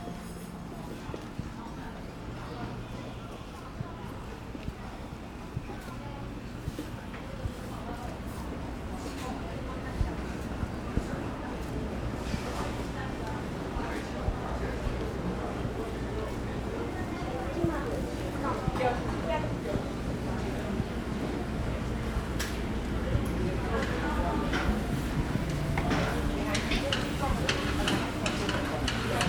a small alley, Traffic Sound, Traditional Market, Zoom H4n + Rode NT4
New Taipei City, Taiwan